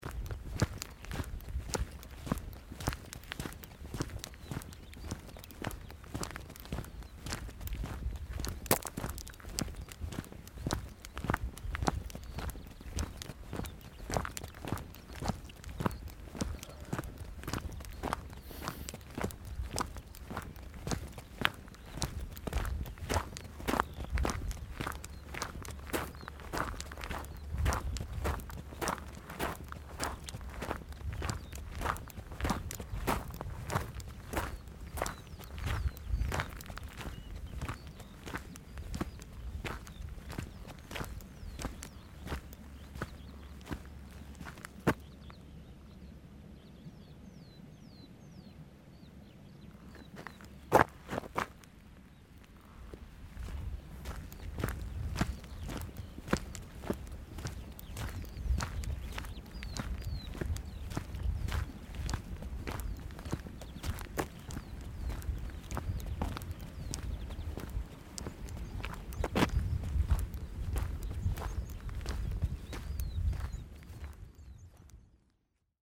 bei frankenheim - freies feld
Produktion: Deutschlandradio Kultur/Norddeutscher Rundfunk 2009